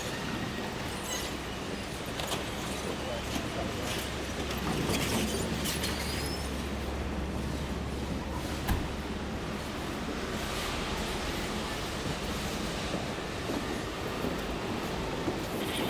Tsukiji Market, Chome Tsukiji, Chūō-ku, Tōkyō-to, Japan - Trying to find a way out...
This recording was made later on, when we were trying to get out of the market complex; I simply held my recorder at my side and attempted to capture some of the madness of all the tiny whizzing carts coming past us at speed from all directions; they are little stand-up carts that are motorised, with space on the back for lots of boxes, and they go at speed and are very nifty. The traffic rules of the fish market are somewhat freestyle so as novices we mostly just tried to keep our wits about us, find a way through, and not get gunned down by the amazing little fish market vehicles.